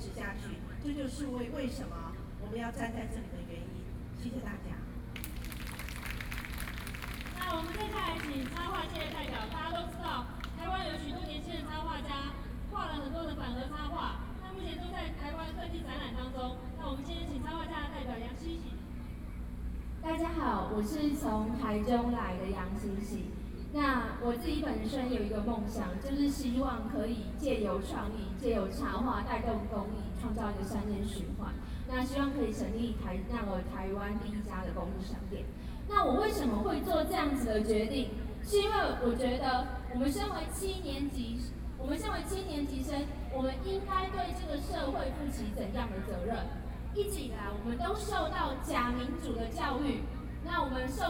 Taipei, Taiwan - Protest
Protest against nuclear power, Zoom H4n+ Soundman OKM II, Best with Headphone( SoundMap20130526- 8)